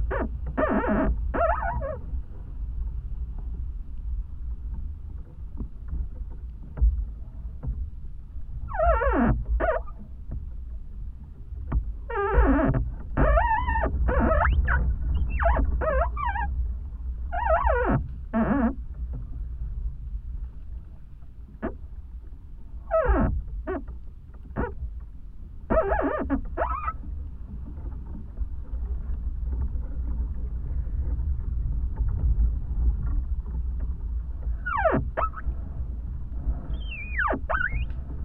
Galeliai, Lithuania, wind and singing tree
Very strong wind. Contact microphones on a "singing" tree